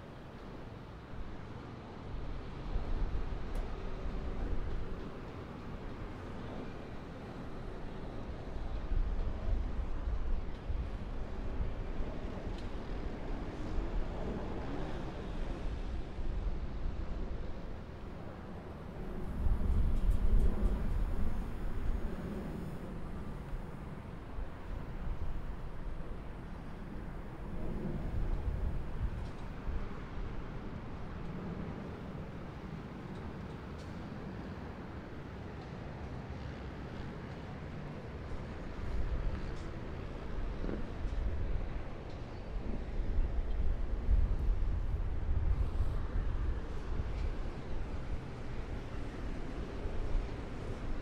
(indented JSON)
{
  "title": "Rua 03, Setor Central",
  "date": "2009-09-21 17:10:00",
  "description": "GOIÂNIA do alto do Prédio",
  "latitude": "-16.67",
  "longitude": "-49.25",
  "altitude": "748",
  "timezone": "America/Sao_Paulo"
}